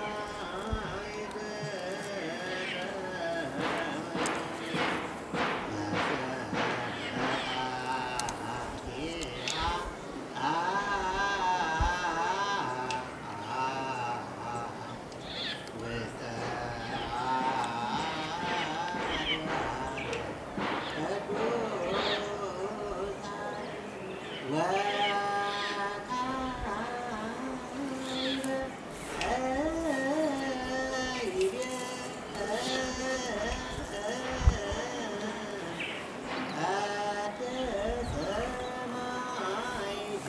Fortress of Kusquam/Qusquam, Gonder, Ethiopia - Lone man singing at Qusquam in Gondar, Ethiopia
In this noisy recording, we find a lone man singing to himself outside the Church of St. Mary, which lies within the Qusquam fortress complex in Gondar, Ethiopia.
አማራ ክልል, ኢ.ፌ.ዲ.ሪ.